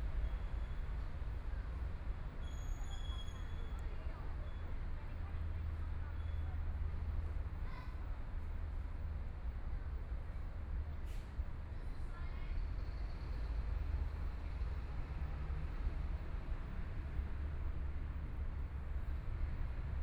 Taipei City, Taiwan - Under the tree
Under the tree, Environmental sounds, Traffic Sound
Please turn up the volume a little
Binaural recordings, Sony PCM D100 + Soundman OKM II
28 February, 17:45